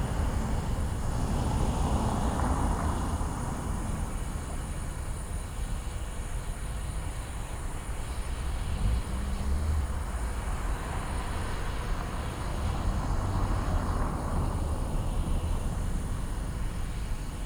Scheepmakersstraat, Den Haag - gas kasten
hissing from gas cabinets. passing cars and trains. Soundfield Mic (ORTF decode from Bformat) Binckhorst Mapping Project
The Hague Center, The Netherlands